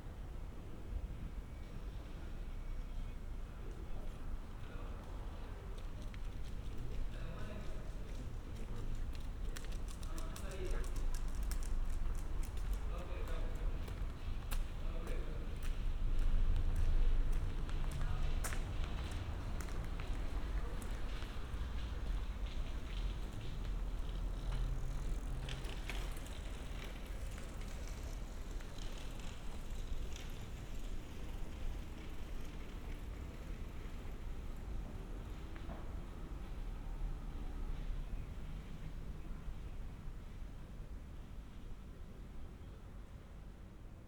Berlin: Vermessungspunkt Friedel- / Pflügerstraße - Klangvermessung Kreuzkölln ::: 15.06.2012 ::: 02:42
Berlin, Germany, 15 June 2012